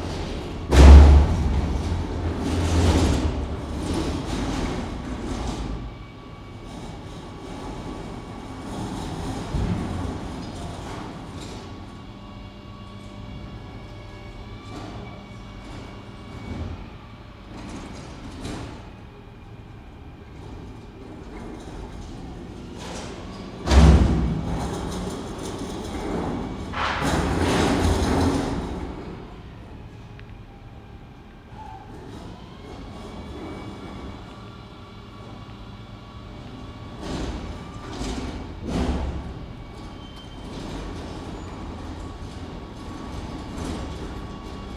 Kings Quarters Apartments, Bristol - Collecting bins from gentrifying flats
The day of bins collection has finally arrived in Kings Quarters Apartments. The walls shake every week of joy. Seagulls scream around as they can smell the future.
Stokes Croft, Bristol.
Recorded with Roland R26, pseudo SASS arrangement using foam with two omni Uši Pro.
City of Bristol, UK, 13 April 2016, ~11:00